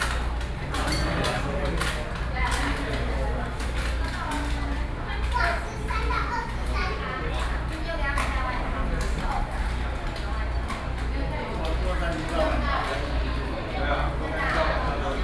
Beitou - In the restaurant
Noisy restaurant, Sony PCM D50, Binaural recordings